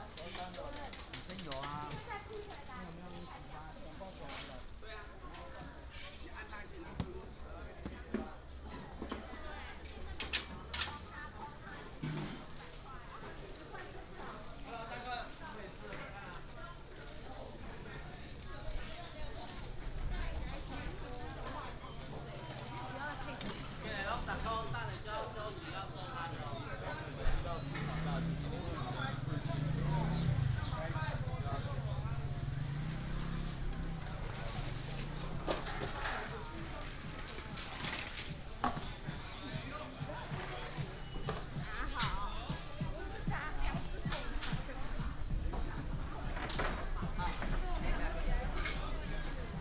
Ren’ai St., Zhubei City - Small market
Small market, alley, Is preparing to pack
7 February 2017, 12:28